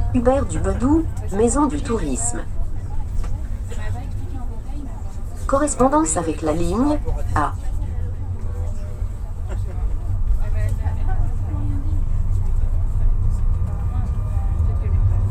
Agn s at work MaisonDuTourisme RadioFreeRobots